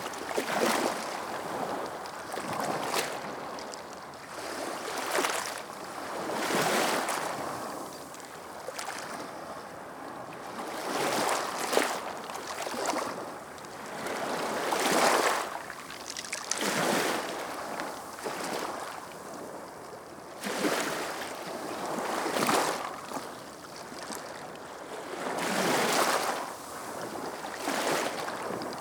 Partida la Olla, Altea, Alicante, Espagne - Altea - Province d'Alicante - Espagne Plage de Olla
Altea - Province d'Alicante - Espagne
Plage de Olla
Ambiance - vagues sur les galets
ZOOM F3 + AKG C451B